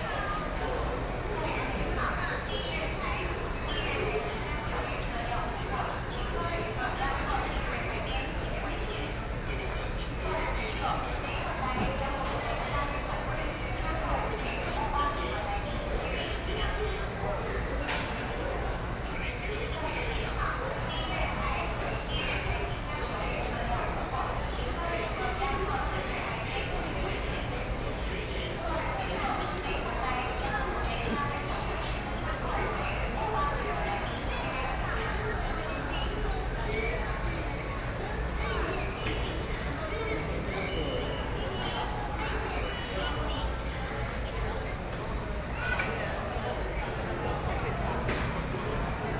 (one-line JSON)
{"title": "Yilan Station, Yilan City - In the station lobby", "date": "2014-07-05 11:01:00", "description": "In the station lobby, Voice message broadcasting station, A lot of tourists\nSony PCM D50+ Soundman OKM II", "latitude": "24.75", "longitude": "121.76", "altitude": "12", "timezone": "Asia/Taipei"}